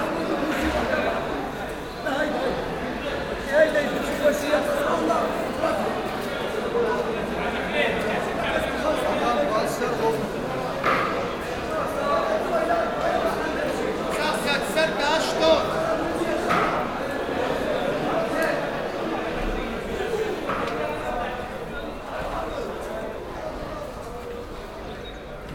Tanger, meat and fish market